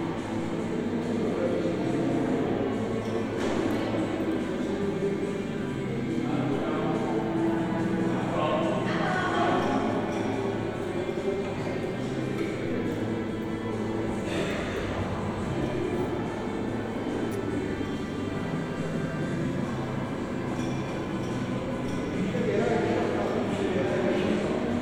Restaurace na Hlavním nádraží v Plzni. Slovany, Česká republika - Samoobsluha
Samoobsluha na Plzeňském nádraží odpoledne.
Plzen-Plzeň, Czech Republic, 16 March